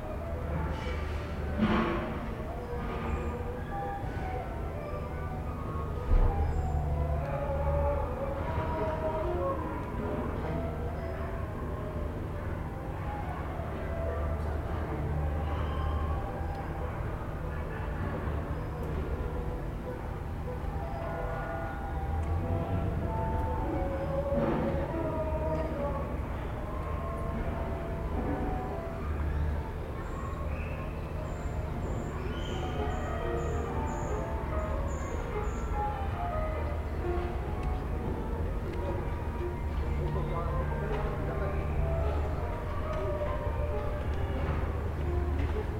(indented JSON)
{
  "title": "Rue Georges Marie Raymond, Chambéry, France - cité des arts",
  "date": "2022-10-06 16:10:00",
  "description": "Chambéry, près de la cité des arts quelques fenêtres des salles de musique sont ouvertes, les répétitions des musiciens se mêlent aux bruits de la ville, les feuilles mortes emportées par le vent virevoltent avant de toucher le sol c'est l'automne il fait 23° j'ai pu faire le déplacement en vélo.",
  "latitude": "45.57",
  "longitude": "5.92",
  "altitude": "271",
  "timezone": "Europe/Paris"
}